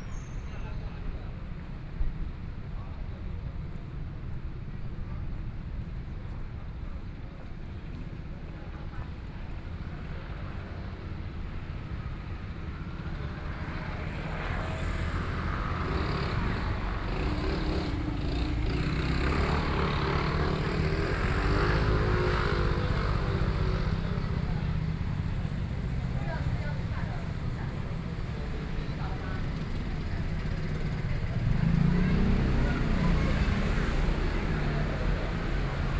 Linhai 2nd Rd., Gushan Dist. - walking on the Road
walking on the Road, Traffic Sound, Various shops voices
Sony PCM D50+ Soundman OKM II
Kaohsiung City, Taiwan, 21 May 2014